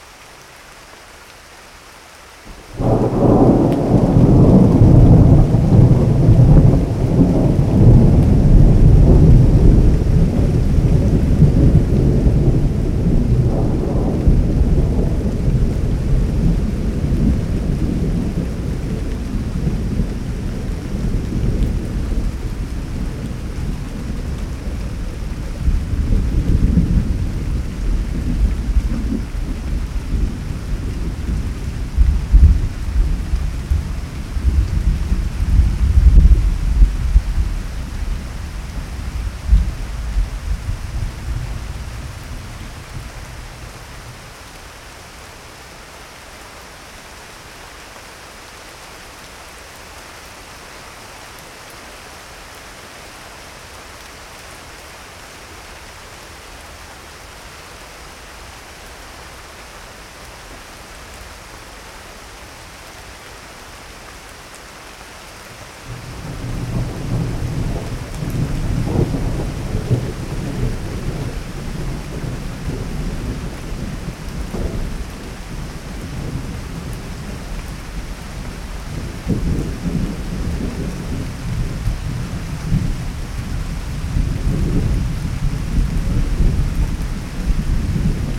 Tangara, Rio Acima, Brazil - Rain and thunder during the night, in Brazil
During a summer night in the countryside of Brazil, in the state of Minas Gerais... somme light rain and big thunders.
Recorded by an ORTF setup Schoeps CCM4x2
On a Sound Devices 633
Recorded on 24th of December of 2018
GPS: -20,11125573432824 / -43,7287439666502
Sound Ref: BR-181224T01
Rio Acima - MG, Brazil, 24 December 2018